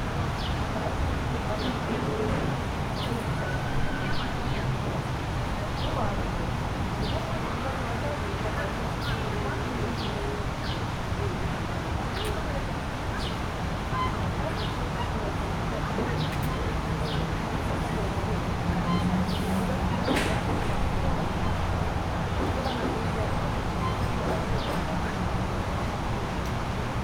Ukrainian Boulevard, Cafe, Moskau, Russland - Cafe hahan
recording inm front of cafe
Moscow, Russia, 9 June 2014, 12:15